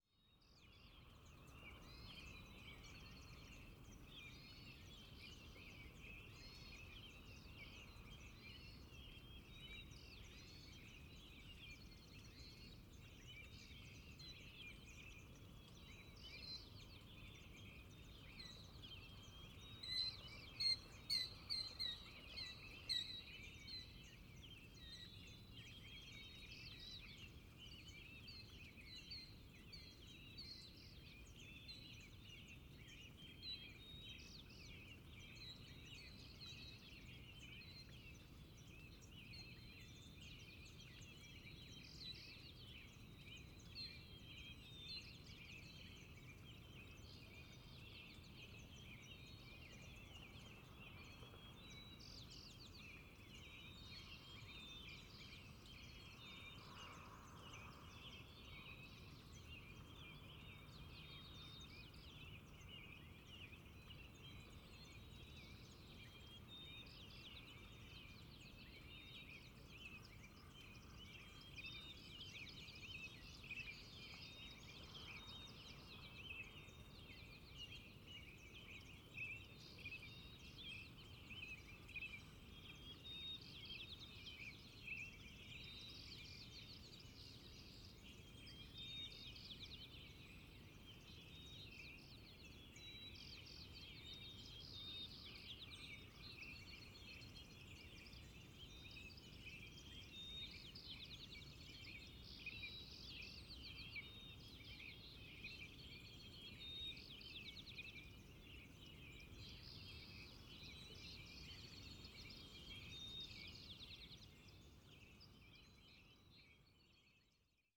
El Polin Spring, Presidio of San Francisco - Dawn Chorus
Dawn chorus at El Polin Spring in the Presidio of San Francisco, an urban national park. I recorded six channels, this sample is from the front stereo pair, MKH 20s on a Jecklin disk with Rycote ball gags.
San Francisco, CA, USA, 8 April 2012, 06:45